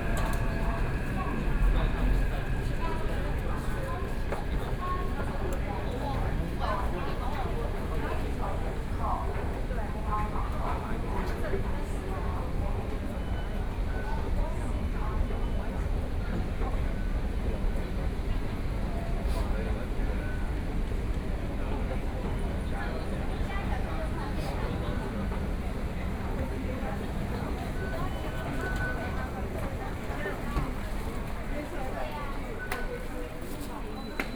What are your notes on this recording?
Walking in the station, From the beginning of the platform, To go outside the station, Sony PCM D50+ Soundman OKM II